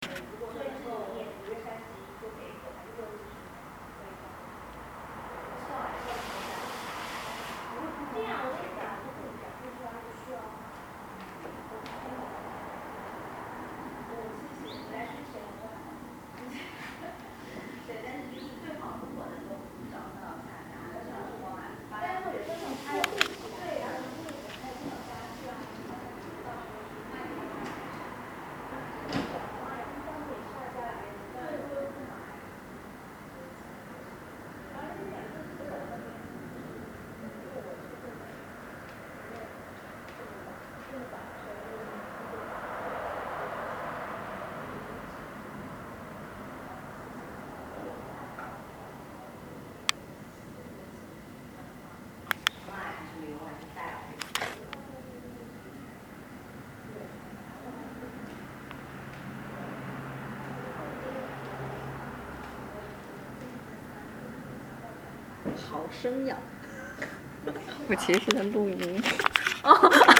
Hermann-Föge-Weg, Göttingen, Germany - Blurred conversation
Recorder: SONY IC recorder ICD-PX333
Blurred conversation among women scholars.